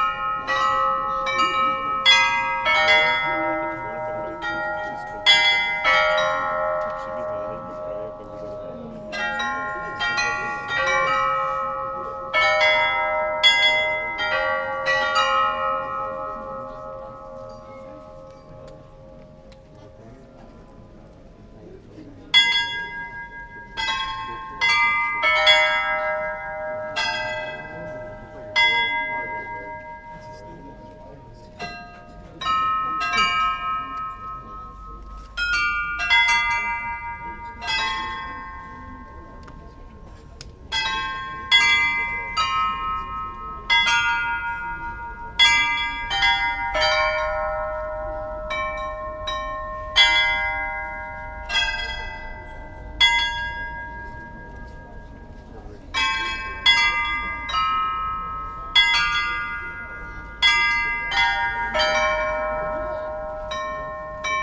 The astronomical clock in Olomouc is unique in its design -though it is originally Renaissance, the external part has been completely rebuilt after the WWII in order to celebrate communist ideology. Today a weird open-air memento of how lovely a killing beast can appear to those, who know nothing.
wwwOsoundzooOcz